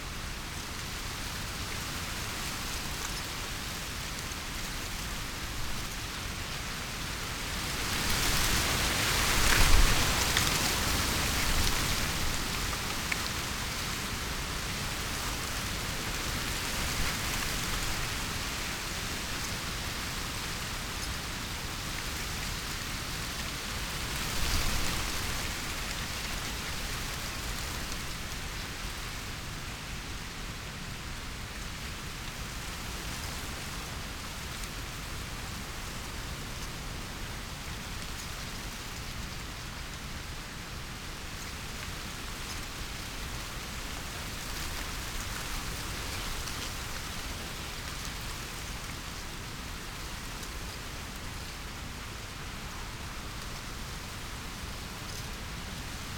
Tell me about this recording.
in a field of maize ... pre-amped mics in a SASS ... distant bird calls from carrion crow ... red-legged partridge ... the maize plants are dessicated and dead ... the plants are you used as cover for game birds ... pheasant ... red-legged partridge ... in the next few weeks the crop will be ploughed in ...